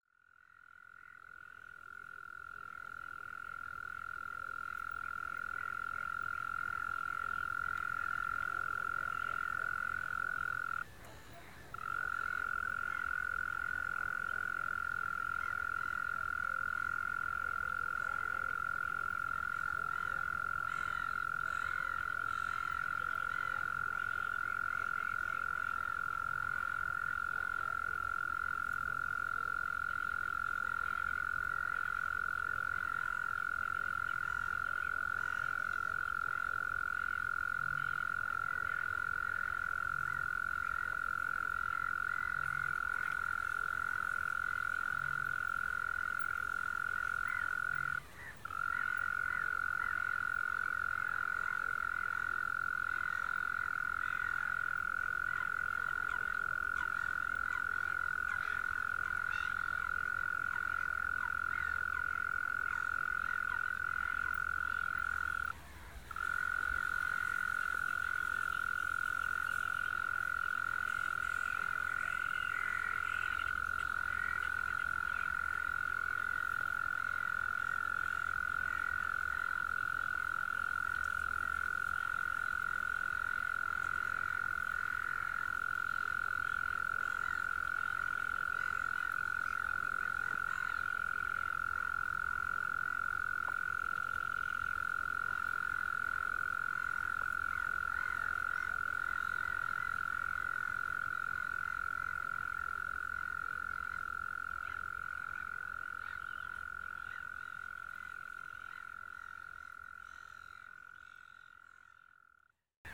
evening tumult of water zoo.
sound like a synthesizer :)
Poland